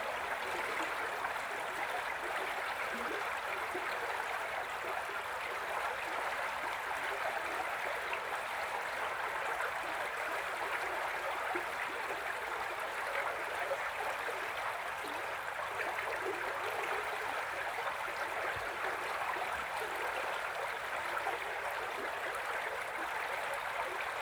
Weir and running water, Lübbenau, Germany - Weir and running water, cyclists over metal bridge
Running water bubbling over the small weir. 3 cyclists cross the metal bridge.